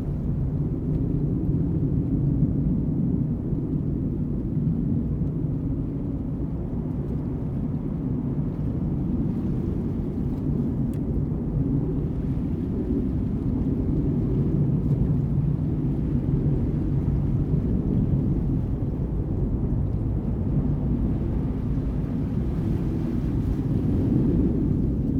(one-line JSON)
{"title": "港南風景區, Xiangshan Dist., Hsinchu City - Sound of the waves and fighters", "date": "2017-09-21 08:39:00", "description": "Sound of the waves, There are fighters taking off in the distance, Zoom H2n MS+XY", "latitude": "24.82", "longitude": "120.91", "altitude": "6", "timezone": "Asia/Taipei"}